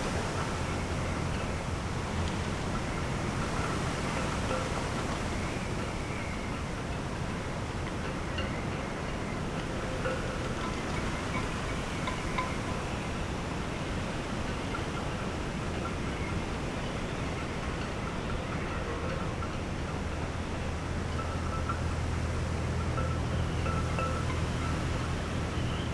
woudsend: parking - the city, the country & me: wind blown birch trees
stormy day (force 7-8), birch trees swaying in the wind
the city, the country & me: june 13, 2013